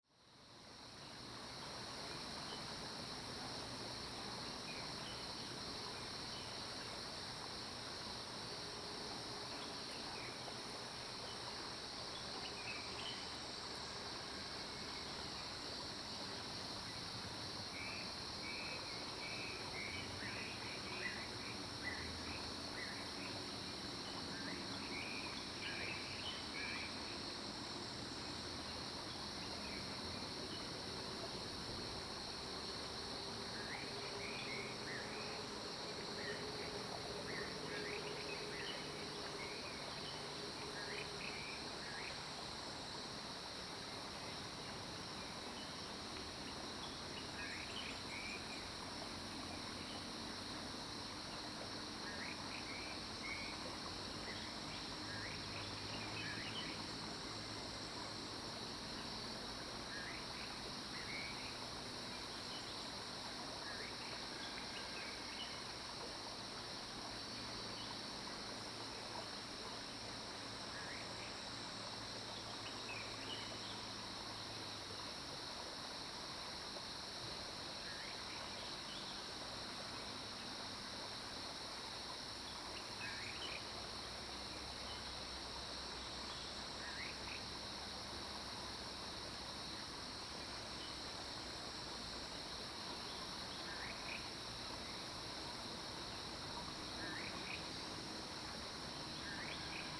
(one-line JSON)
{"title": "中路坑, 埔里鎮桃米里 - Bird calls", "date": "2015-06-11 05:57:00", "description": "Crowing sounds, Bird calls, Early morning, Stream\nZoom H2n MS+XY", "latitude": "23.94", "longitude": "120.92", "altitude": "485", "timezone": "Asia/Taipei"}